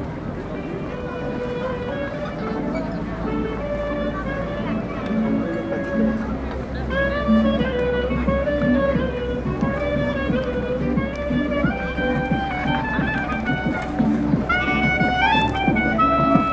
København, Denmark - Tourist avenue Nyhavn
During a day off, the main tourist avenue of Copenhagen called Nyhavn. Most people are discreet.
15 April